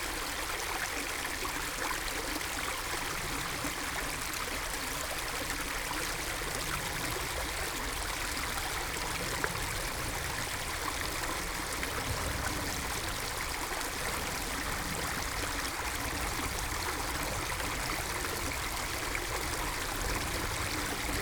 Stranggraben, Rüdersdorf bei Berlin, Deutschland - small stream, water flow
nature preserve, Lange Dammwiesen / Annatal, Stranggraben, small stream between two lakes (Großer u. Kleiner Stienitzsee).
(Sony PCM D50, DPA 4060)
11 February 2018, ~14:00